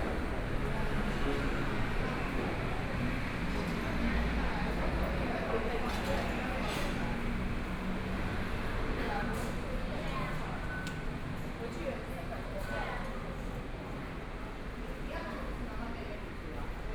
Wende Station, Taipei City - the MRT station
Walking into the MRT station
Please turn up the volume a little. Binaural recordings, Sony PCM D100+ Soundman OKM II
12 April 2014, ~22:00